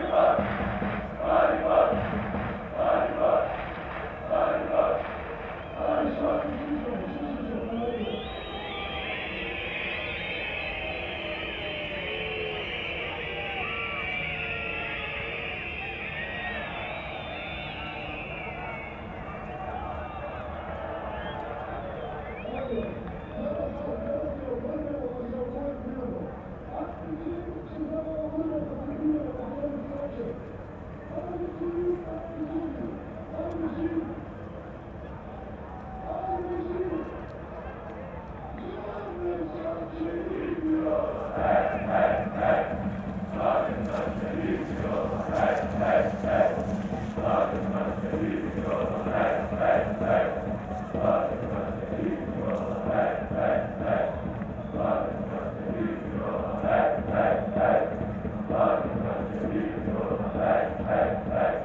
Maribor, near Kalvarija - distant sounds from the soccer arena
the sounds from a match in Maribor stadium, heard on the hills near Kalvarija chapel.
(SD702, Audio Technica BP4025)